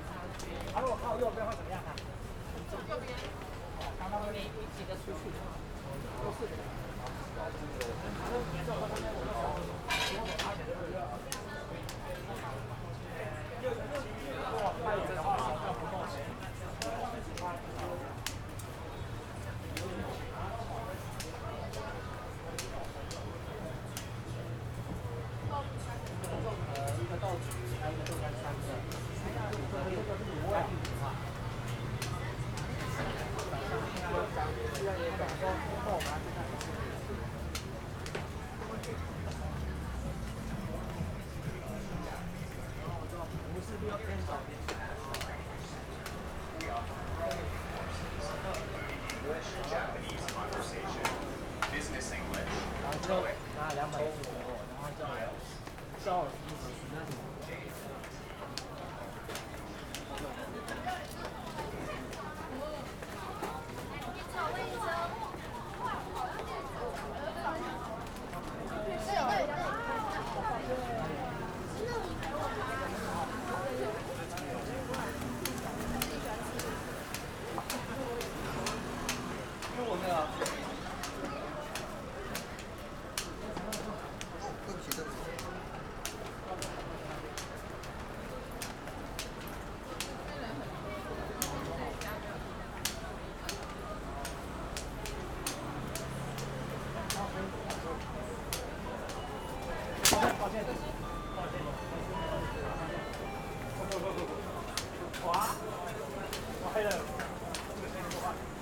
Xuchang St., Zhongzheng Dist. - Followed a blind
In the corner of the street, Followed a blind, The visually impaired person is practicing walking on city streets, Zoom H6 Ms + SENNHEISER ME67